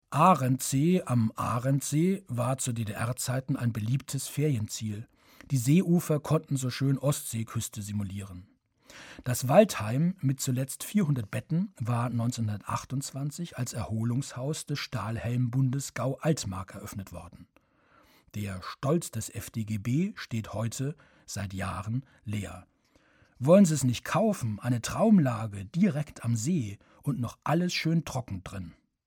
arendsee - ex-fdgb-heim
Produktion: Deutschlandradio Kultur/Norddeutscher Rundfunk 2009